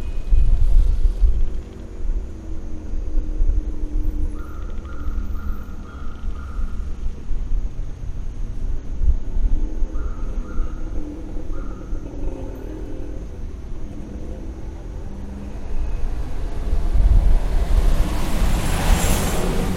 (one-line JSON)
{"title": "San Sebastián, San José, Costa Rica - Adelante, doña Elizabeth (Antiguo Hipermás)", "date": "2010-10-08 19:27:00", "description": "A taxi in the parking lot, waiting for instructions", "latitude": "9.91", "longitude": "-84.08", "altitude": "1135", "timezone": "America/Costa_Rica"}